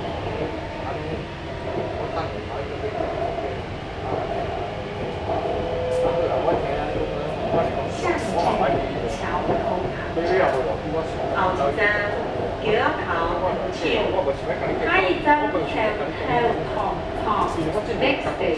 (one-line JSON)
{"title": "Qiaotou - Inside the MRT", "date": "2012-03-29 15:30:00", "description": "from Qiaotou Station to Cingpu Station, Mother and child, Sony ECM-MS907, Sony Hi-MD MZ-RH1", "latitude": "22.75", "longitude": "120.32", "altitude": "14", "timezone": "Asia/Taipei"}